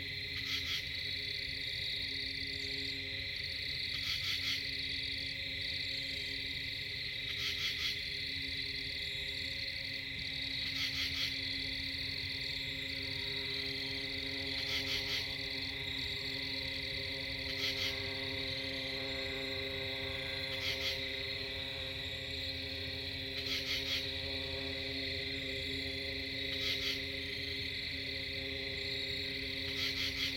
Tucked off of Quaker Bridge Road in the pine barrens of Wharton State Forest. Mostly hypnotic insect chatter and long-ranged traffic noise. A screech owl haunts late in the recording. One of many solo nights spent deep in the forest, simply listening. Fostex FR2-LE; AT3032)
NJ, USA